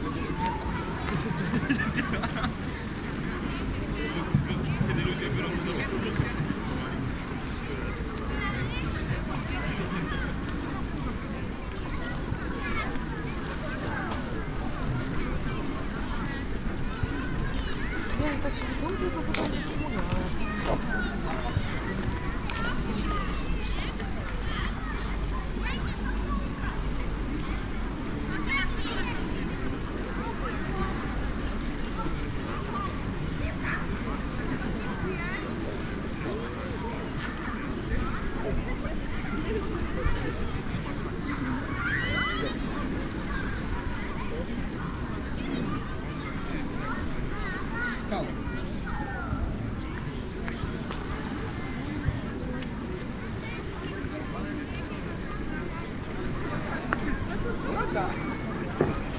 Strzeszyn, Strzeszynskie lake - lawn near pier
plenty of people resting on a lawn at the lake shore, swimming, playing badminton, riding bikes. mellow atmosphere on a sunny Sunday afternoon. (sony d50)